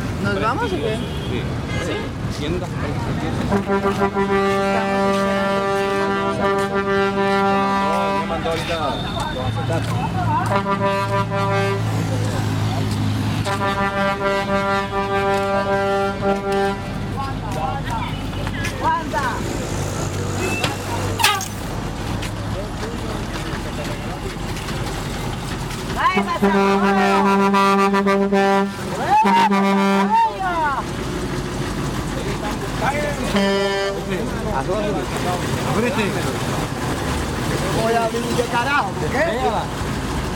{
  "title": "Cartagena, Bomba del Amparo, PARADA DE BUSES",
  "date": "2006-06-10 21:48:00",
  "description": "Traditional Bus sparring to anounce and collect passengers for pasacaballos.",
  "latitude": "10.39",
  "longitude": "-75.48",
  "timezone": "America/Bogota"
}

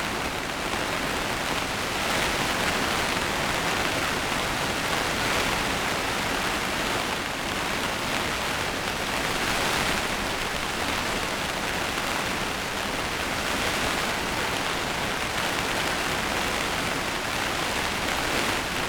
{
  "title": "Chapel Fields, Helperthorpe, Malton, UK - inside polytunnel ... outside storm ...",
  "date": "2020-06-11 21:45:00",
  "description": "inside polytunnel outside storm ... dpa 4060s on pegs to Zoom H5 clipped to framework ...",
  "latitude": "54.12",
  "longitude": "-0.54",
  "altitude": "77",
  "timezone": "Europe/London"
}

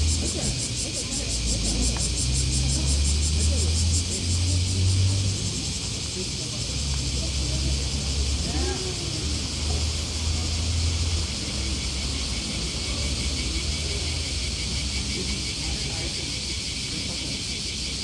{
  "title": "Kojimacho, Moriyama, Shiga Prefecture, Japan - Hatonomori Park in Summer",
  "date": "2016-08-01 10:18:00",
  "description": "Cicadas singing and people playing ground golf in Hatonomori Park on a host summer day.",
  "latitude": "35.07",
  "longitude": "136.00",
  "altitude": "96",
  "timezone": "Asia/Tokyo"
}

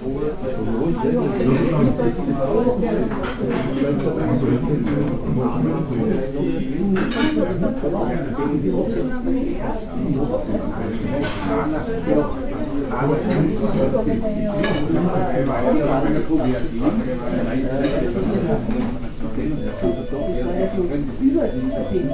{
  "title": "Linz, Österreich - leopoldistüberl",
  "date": "2015-02-02 13:06:00",
  "description": "leopoldistüberl, adlergasse 6, 4020 linz",
  "latitude": "48.31",
  "longitude": "14.29",
  "altitude": "268",
  "timezone": "Europe/Vienna"
}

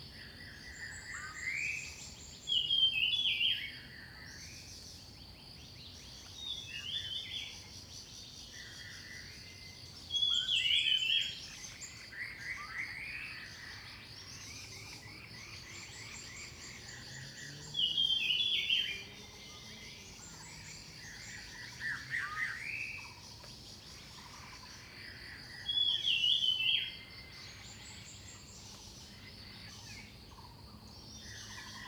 {
  "title": "種瓜路, 草湳桃米里 - Birds singing",
  "date": "2016-05-06 06:22:00",
  "description": "Birds called, Birds singing\nZoom H2n MS+XY",
  "latitude": "23.95",
  "longitude": "120.91",
  "altitude": "617",
  "timezone": "Asia/Taipei"
}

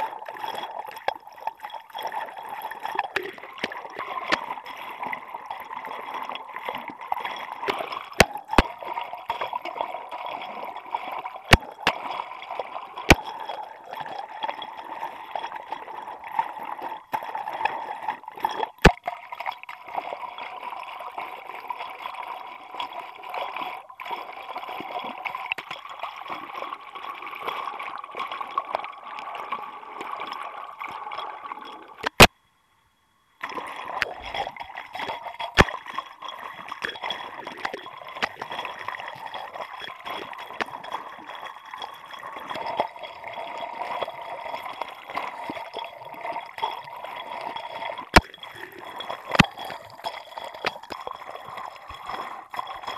{
  "title": "Općina Zadar, Croatia - Hydrophone Recording In Zadar, Croatia",
  "date": "2020-06-07 10:33:00",
  "description": "Hydrophone recording from the full speed boat",
  "latitude": "44.11",
  "longitude": "15.20",
  "timezone": "Europe/Zagreb"
}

